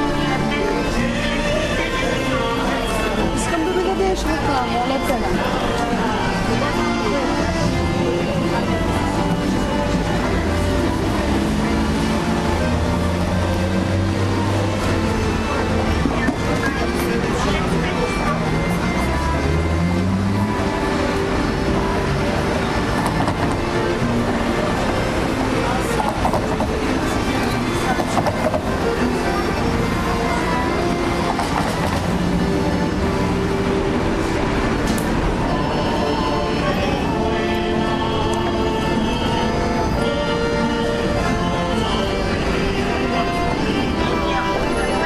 Sofia, street noise and musicians III - street noise and musicians III
5 October, ~11:00